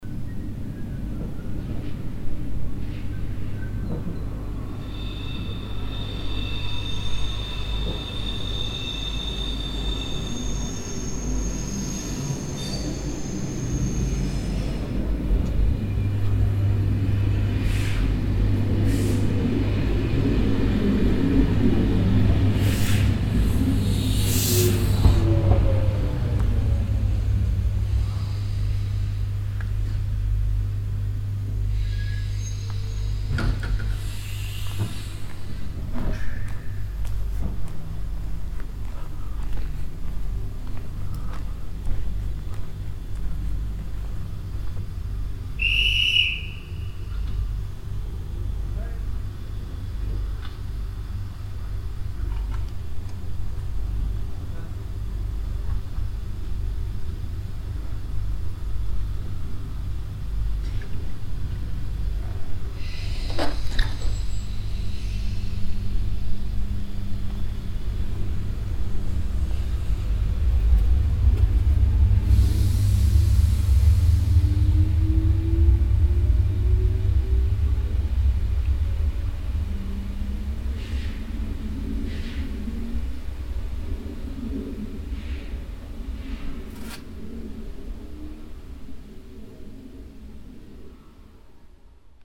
kautenbach, station, train arrival
At the station in the morning time. A deep grounding sound as the regional train arrives. Doors open, people exit, the whistle of the train guard, pneumatic air as the doors close and the train leaves the station.
Kautenbach, Bahnhof, Zugeinfahrt
Morgens am Bahnhof. Ein tiefes Geräusch bei der Ankunft des Regionalzuges. Türe öffnen sich, Menschen steigen aus, die Pfeife des Zugschaffners, ein Luftzug als die Türen schließen und der Zug verlässt die Station.
Kautenbach, gare, train qui entre en gare
Le matin, à la gare. Un bruit sourd lorsque le train régional entre en gare. Les portes s’ouvrent, les passagers descendent, le sifflet du contrôleur, le piston pneumatique de la porte qui se referme et le train qui sort de la gare.
Project - Klangraum Our - topographic field recordings, sound objects and social ambiences